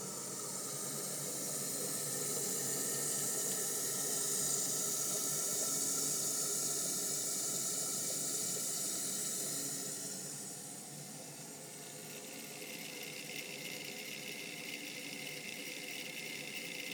berlin - koepenick water
water, running, refilling